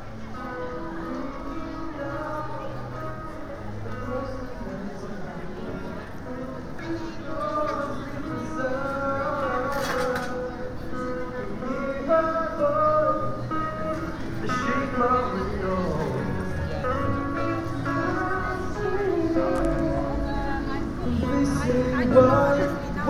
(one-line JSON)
{"title": "Broad Street, Reading, UK - Christmas on Broad Street Soundwalk (West to East)", "date": "2021-12-18 14:40:00", "description": "A short soundwalk from the pedestrianised section of Broad Street in Reading from west to east, passing the Salvation Army band, buskers, small PAs on pop-up stalls and RASPO steel pan orchestra. Binaural recording using Soundman OKM Classics and windscreen 'ear-muffs' with a Tascam DR-05 portable recorder.", "latitude": "51.46", "longitude": "-0.97", "altitude": "47", "timezone": "Europe/London"}